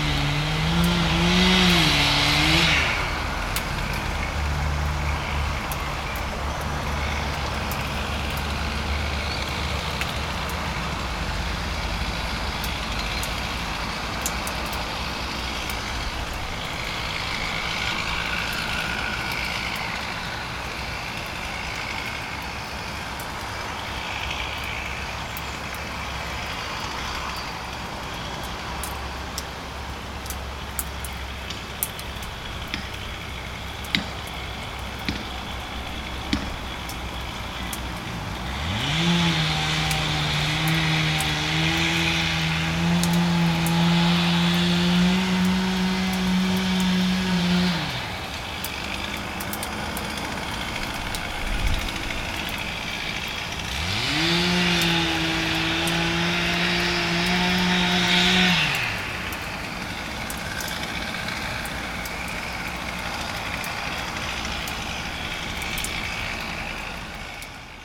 Wald-Michelbach, Deutschland - Preparation for winter
On this rainy spring day a man took preparations for the comming winter by making firewood. Recorded with the internal stereo miks of Tascam DR100 MKII.
Wald-Michelbach, Germany